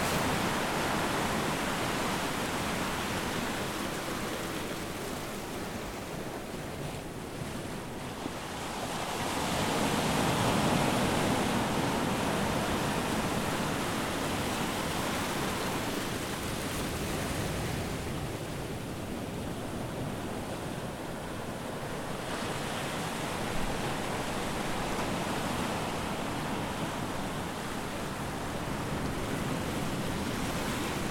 Field recording of the waves on the rocks taken with H4n in stereo mode.
Taken from the rocks, close to the sea.
Nice weather, no wind.
Seagulls.